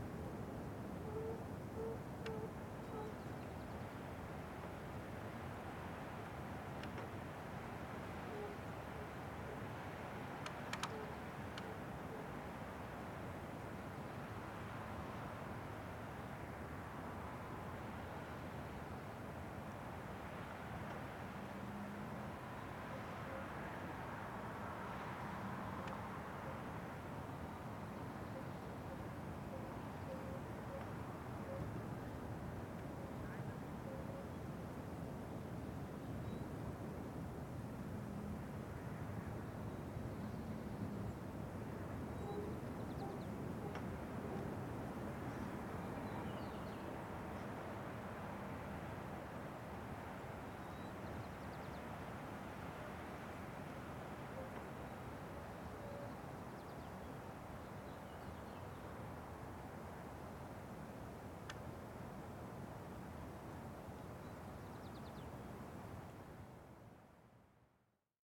Pierce Point Ranch barn ambience
quietude at the Pierce Point Ranch in Point Reyes park
CA, USA, 12 April, ~09:00